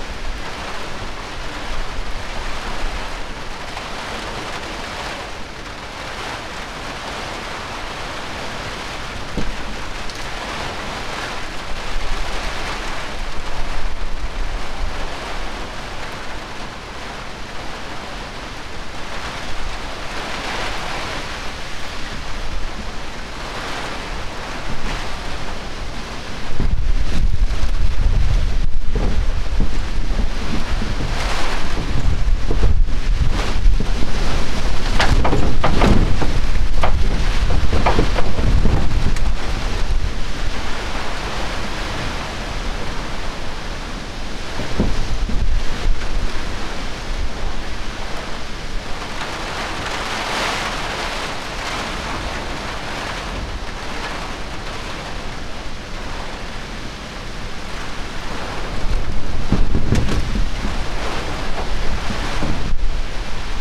Chittaranjan Colony, Kolkata, West Bengal, India - Summer rain and storm
The mic is located on my rooftop under a tin shed. This is a typical stormy and rainy day in summer. Storm is quite common in summer. If the depression on Bay of Bengal is massive then it turns into cyclones. Every year this city face two to three cyclones, which are sometimes really massive and destructive.